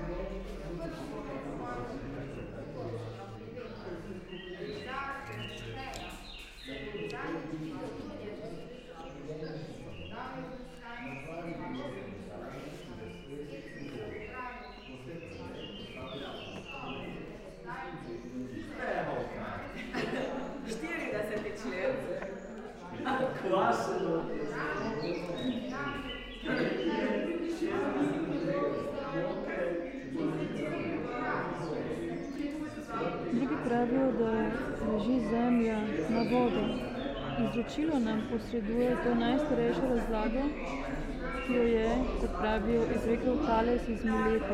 Secret listening to Eurydice, Celje, Slovenia - Public reading 8
sonic fragment from 45m59s till 52m15s